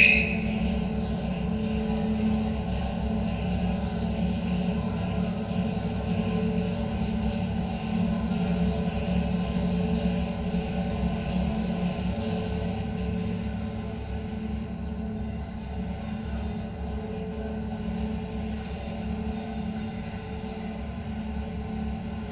contact mic on Metro entry railing, Istanbul
escalators provide a constant drone that resonates in a railing at the entry to the Metro